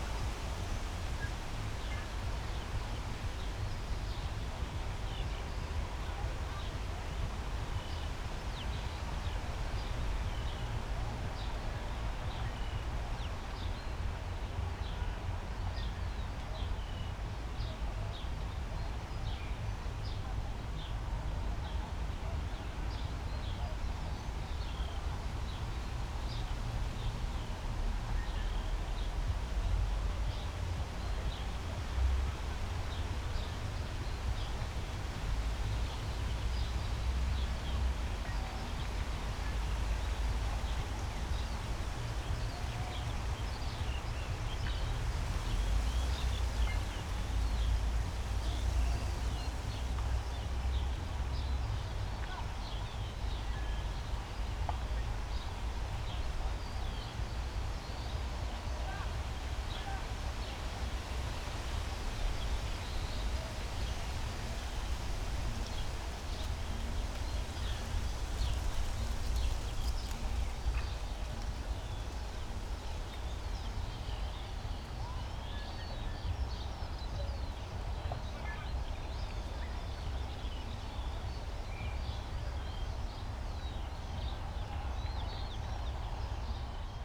Tempelhofer Feld, Berlin, Deutschland - early evening ambience, at the poplar trees
place revisited in June
(Sony PCM D50, Primo EM172)